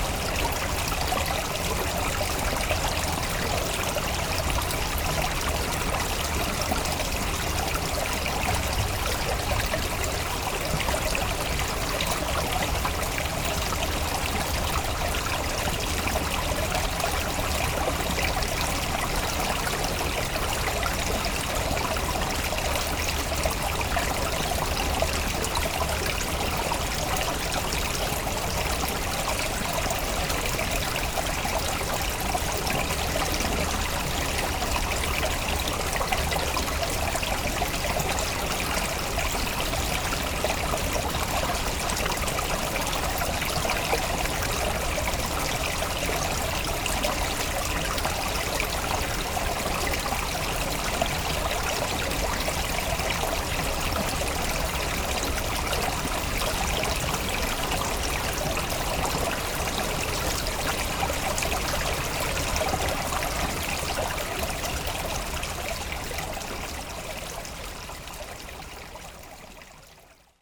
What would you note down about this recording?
A small river is flowing from the pastures and to the Seine river.